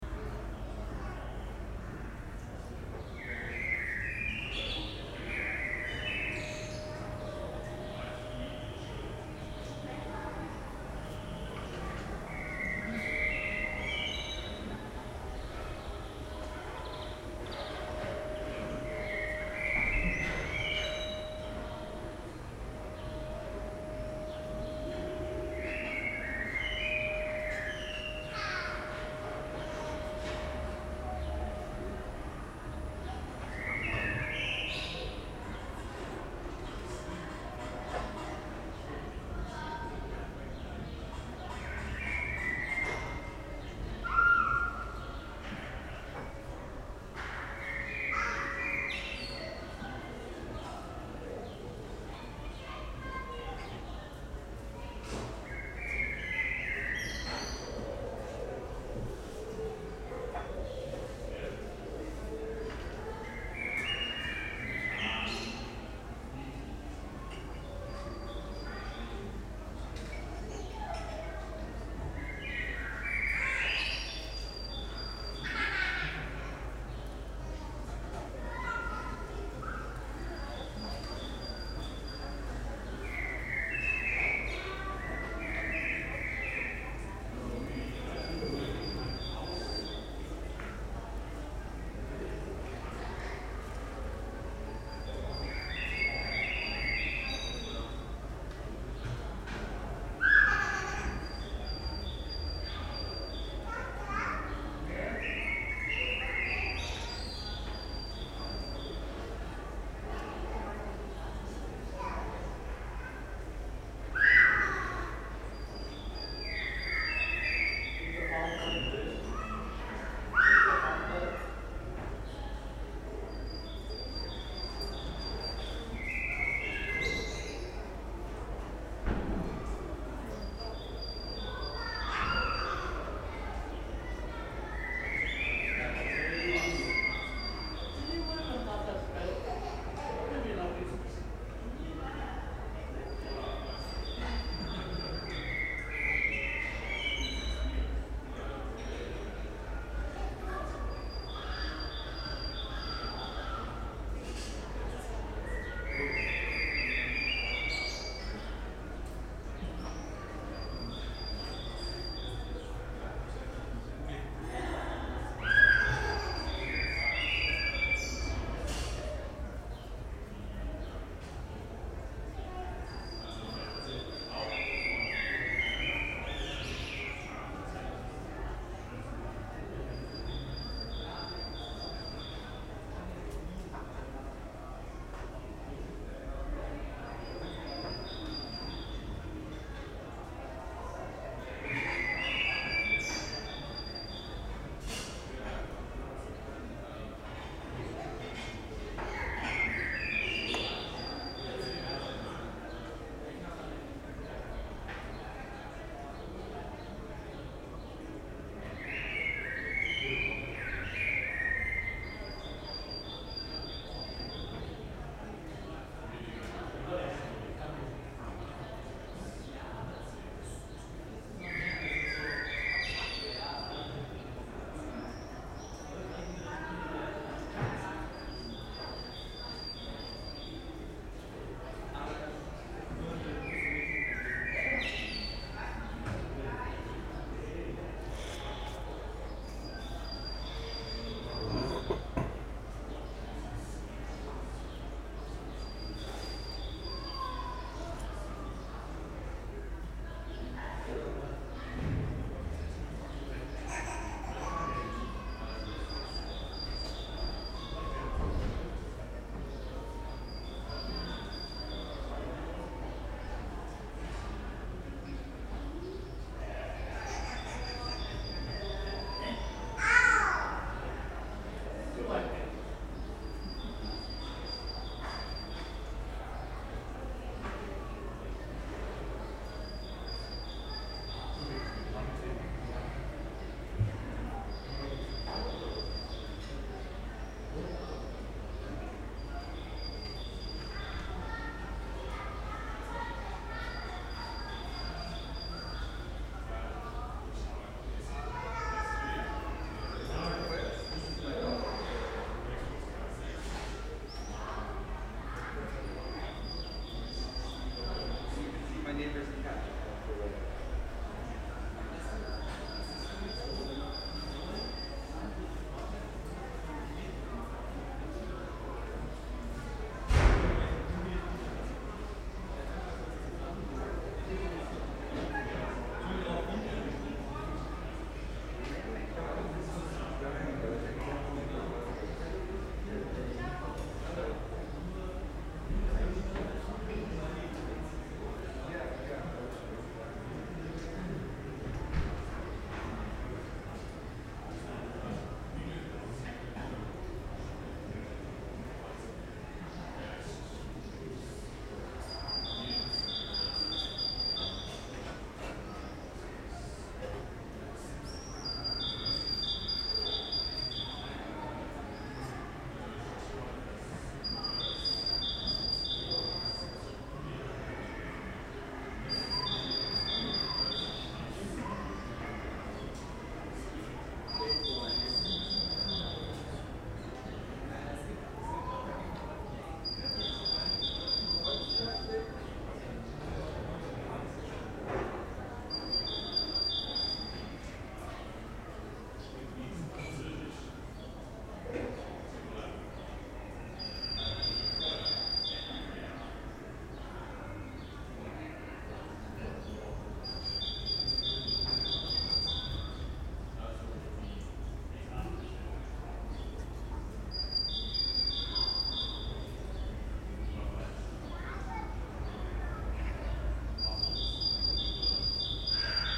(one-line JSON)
{"title": "Husemannstr, Berlin, Germany - Second backyard, spring, birds, tenants", "date": "2014-05-25 13:43:00", "description": "Second backyard, spring, birds, vacuum cleaner", "latitude": "52.54", "longitude": "13.42", "altitude": "60", "timezone": "Europe/Berlin"}